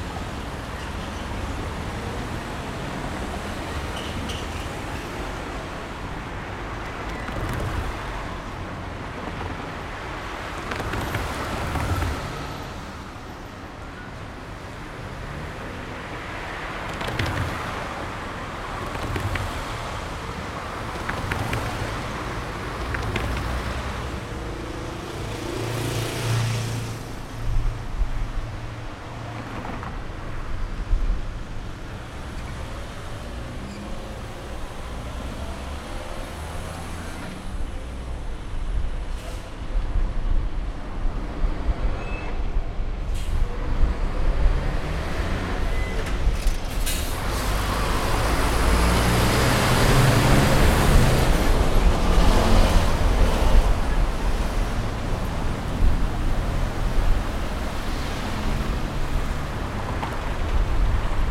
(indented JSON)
{
  "title": "leipzig lindenau, karl-heine-straße ecke zschochersche straße",
  "date": "2011-08-31 13:15:00",
  "description": "karl-heine-straße ecke zschochersche straße: eine vielbefahrene kreuzung zwischen verkehrslärm und momenten urbaner stille. autos, straßenbahnen, räder als urbane tongeber.",
  "latitude": "51.33",
  "longitude": "12.34",
  "altitude": "118",
  "timezone": "Europe/Berlin"
}